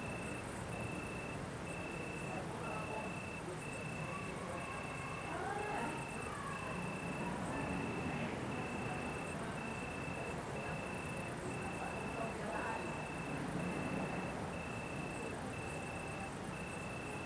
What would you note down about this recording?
[Hi-MD-recorder Sony MZ-NH900, Beyerdynamic MCE 82]